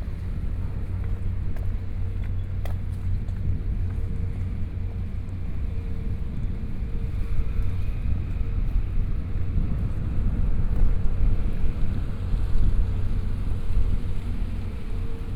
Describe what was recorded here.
Rainy Day, Fishing harbor full of parked, Fishing boat motor sound, Binaural recordings, Zoom H4n+ Soundman OKM II